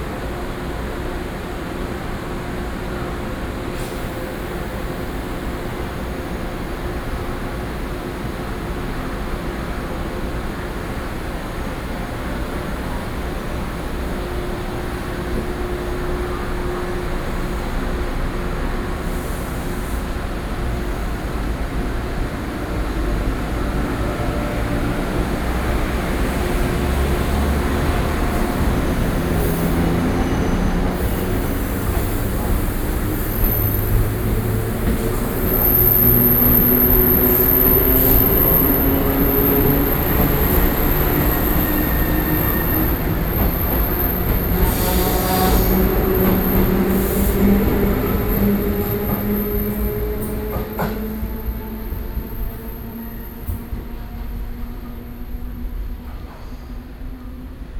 Ruifang, New Taipei City - Railway platforms